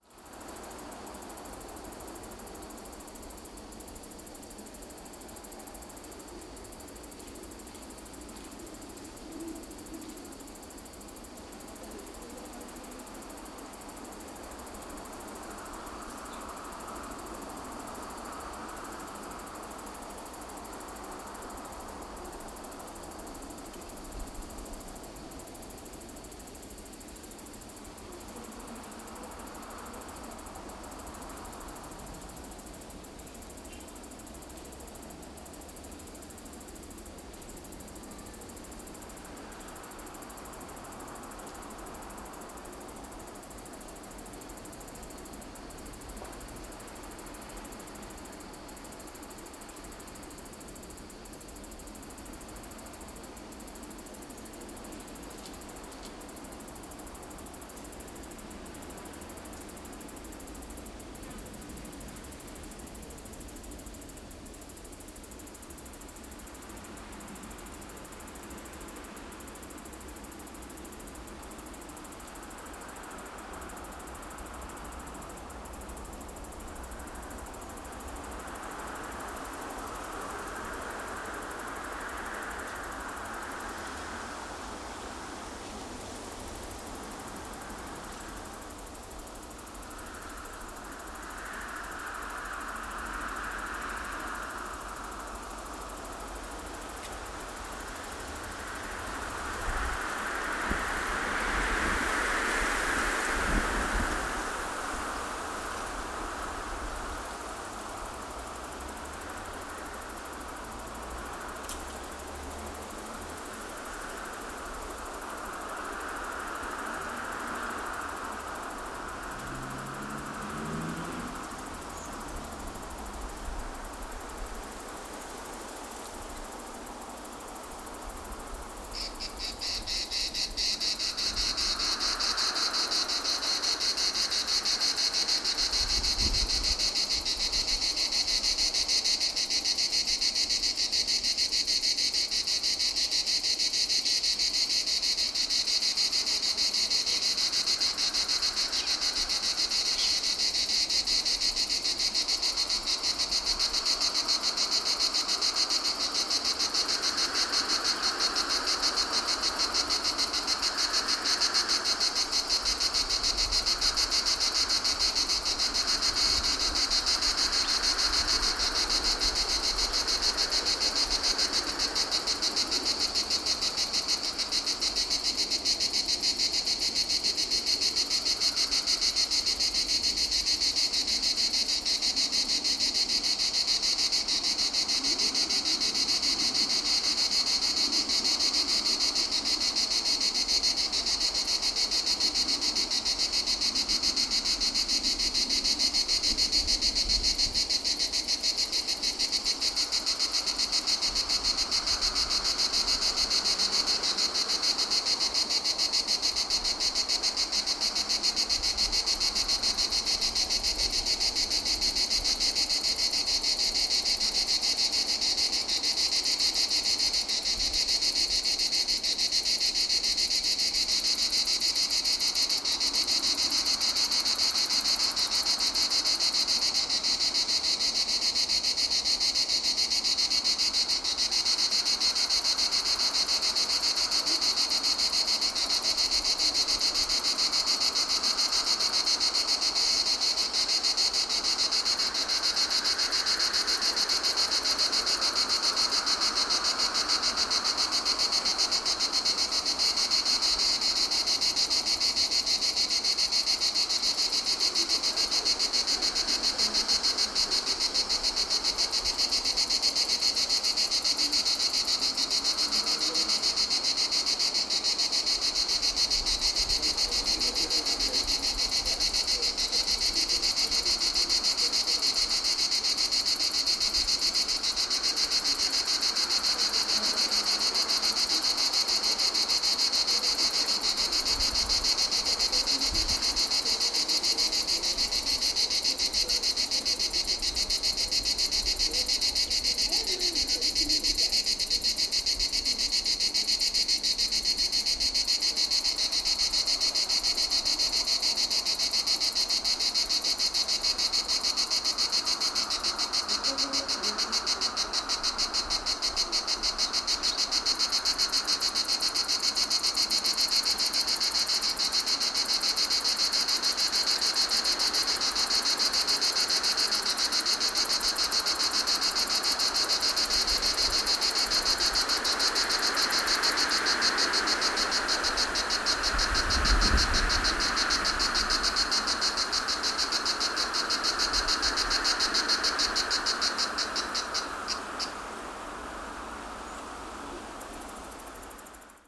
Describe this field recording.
Wind and cicadas on the top of Montemor-o-novo Convent, on a sunny summer afternoon. Stereo matched pair of primos 172 mics into a SD Mixpre6.